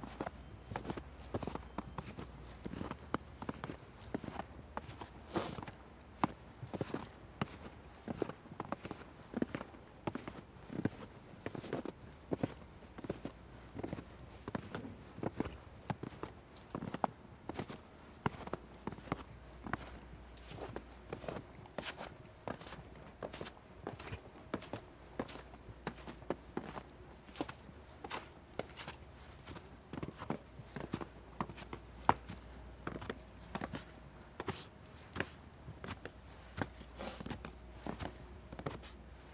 Snow: walk up Clemens Holzmeister Stiege and read the Trakl Poem "Am Mönchsberg"
Österreich, 9 December 2021, 11:30